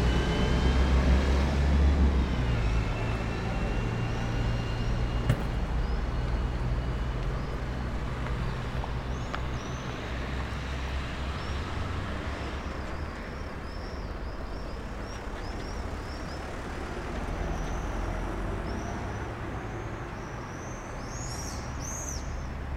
Perugia, Italia - traffic in a corner
traffic
[XY: smk-h8k -> fr2le]
Perugia, Italy, 21 May 2014